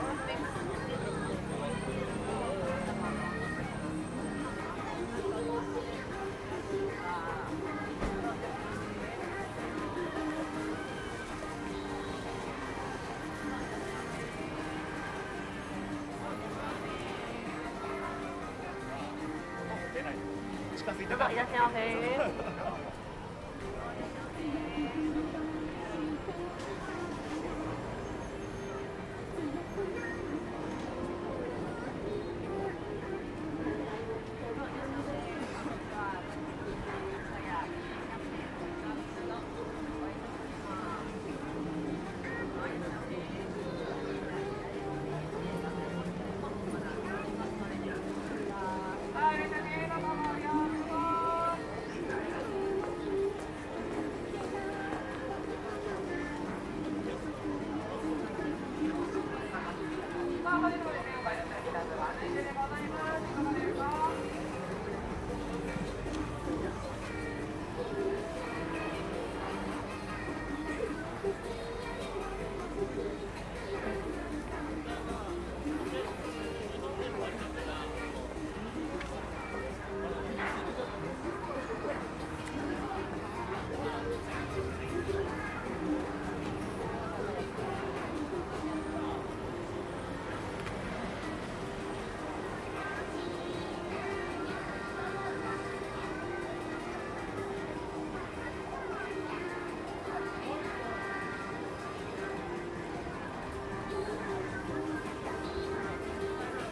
Sotokanda, Chiyoda-ku, Tōkyō-to, Japonia - Akihabara
Recorded in front of a Sofmap store, a large retailer for computer and console gaming. You can hear the shopkeepers calling to the crowds, as well as advertisement music. Recorded with Zoom H2n
Chiyoda-ku, Tōkyō-to, Japan, 10 January 2015